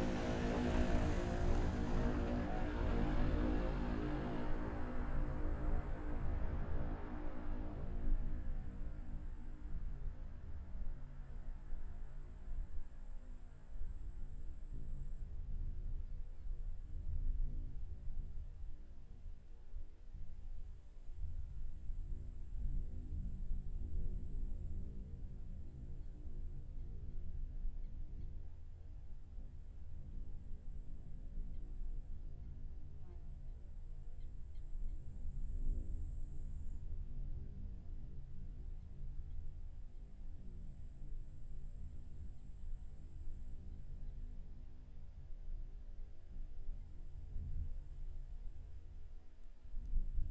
Lithuania, Sudeikiai, in the tube
small microphones placed in the two metallic tubes
21 July 2015